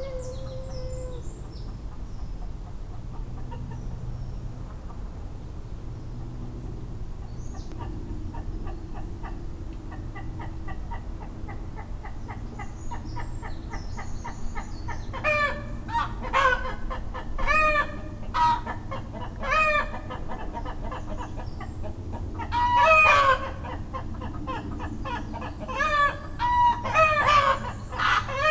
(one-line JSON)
{"title": "Court-St.-Étienne, Belgique - Hens are afraid", "date": "2015-09-09 06:20:00", "description": "Going to work by bike, I had the great idea to record the rooster shouting, before the noisy and heavy cars trafic charge. But, this is a dark place here. After 45 seconds, hens are afraid of me. Early in the morning, this made a great hens and rooster song ! I guess neighbors were happy !", "latitude": "50.64", "longitude": "4.57", "altitude": "71", "timezone": "Europe/Brussels"}